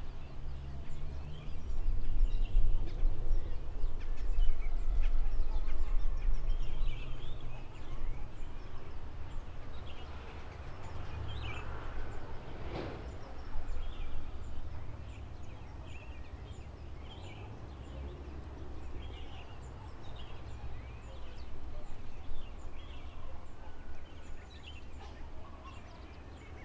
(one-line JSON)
{"title": "Chemin Lisiere de la Foret, Réunion - 20181116 150328 lg78rurv1953 chemin lisière de la foret AMBIANCE SONORE CILAOS", "date": "2018-11-16 15:03:00", "description": "Enregistrement sonore.Quartier du Matarum, CILAOS Réunion. On a ici La Réunion des oiseaux de la forêt avec ceux des jardins, le bull bull de la Réunion (merle-pei) avec le bull bull orphée (merle-maurice, celui qui domine), plus les autres oiseaux tels le cardinal, la tourterelle malgache, les oiseaux blancs et oiseaux verts, les tec tec, au loin, des martins, des becs roses, avec un peu de coq et de chiens, et de la voiture tuning. Par rapport aux années 1990 même saison, cette ambiance sonore s'est considérablement appauvrie en grillons diurnes. Il ya des abeilles. On n'entend pas vraiment de moineaux.", "latitude": "-21.13", "longitude": "55.48", "altitude": "1318", "timezone": "GMT+1"}